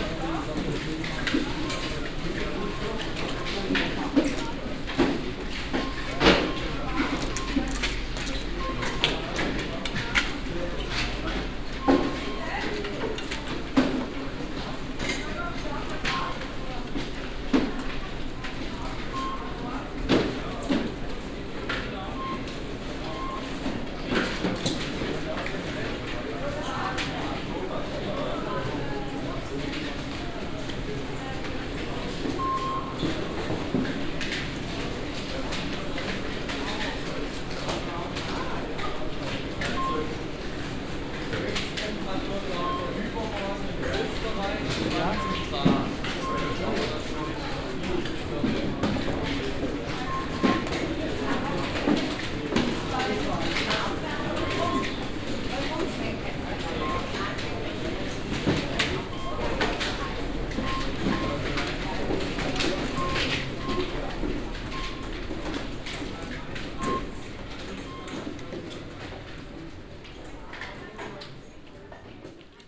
lech, arlberg, rütikopfbahn
At the entrance of the mountain gongola entitled Rütikopfbahn (leading up the mountain Rütikopf). The sound of heavy snow shoes walking inside weared by visitors who also carry their ski sticks, helmets, snowboards and other ski equipment. Permanently the sound of the engine that moves the steel rope.
international sound scapes - topographic field recordings and social ambiences